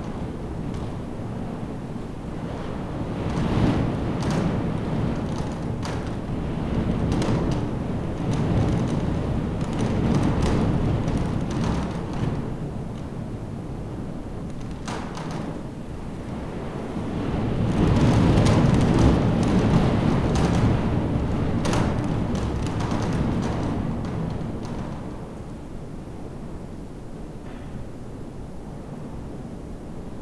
schaprode: st. johanneskirche - the city, the country & me: saint johns church
confessional box rattling in draft (during storm)
the city, the country & me: march 8, 2013
Schaprode, Germany